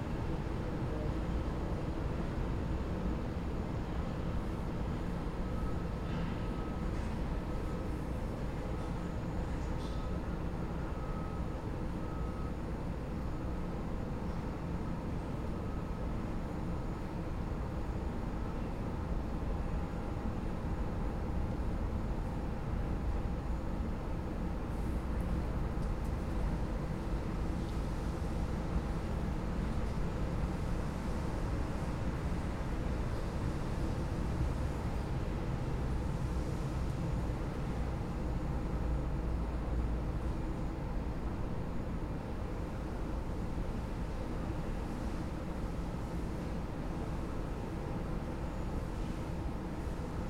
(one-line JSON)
{"title": "Lisbon, Portugal - Nearly empty terminal", "date": "2021-12-14 07:00:00", "description": "Early morning at a nearly empty part of the terminal. From outside we can listen to luggage carts and one aeroplane taxing to gate. TASCAM DR-40X on AB.", "latitude": "38.77", "longitude": "-9.13", "altitude": "104", "timezone": "Europe/Lisbon"}